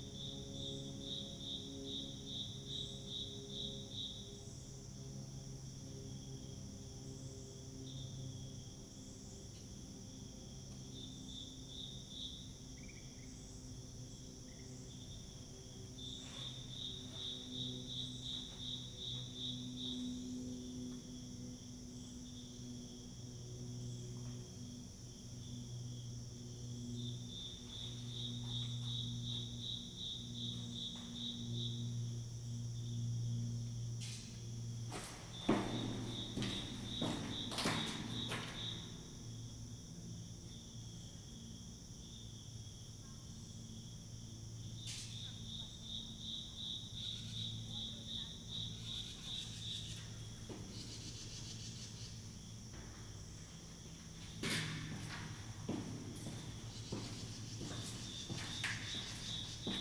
Tunnel, Ballwin, Missouri, USA - Koridorius
Recording from within a low 90 year old tunnel that passes under train tracks. A cricket marks time like the ticking of a clock. Biophonic and anthrophonic sounds captured internal and external to the corridor. Internal: cricket, footsteps. External: birds, katydids, airplane, voices.
Missouri, United States of America, 27 September 2020